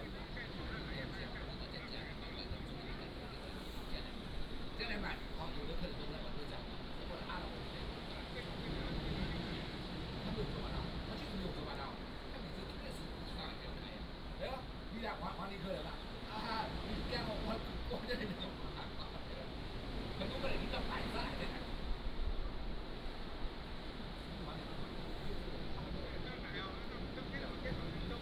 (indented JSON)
{
  "title": "橋仔村, Beigan Township - Small fishing village",
  "date": "2014-10-13 17:13:00",
  "description": "Small port, Sound of the waves, tourists",
  "latitude": "26.24",
  "longitude": "119.99",
  "altitude": "14",
  "timezone": "Asia/Shanghai"
}